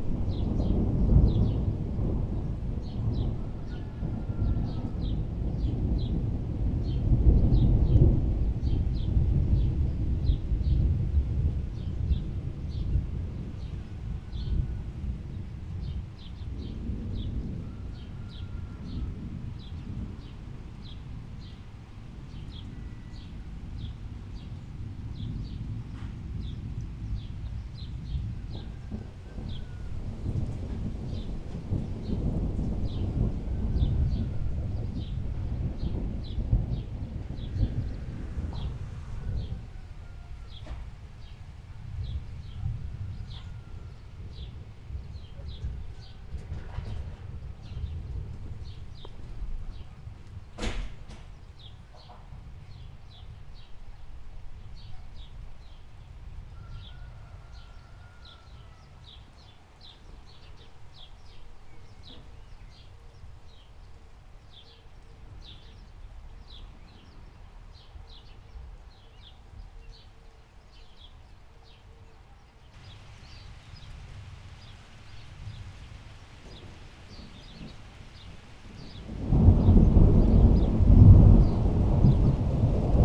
Tollos, Murcia, Spain - thunder and rain
Afternoon thunderstorm. the cars honk on their way uphill to warn hikers.
6 July 2019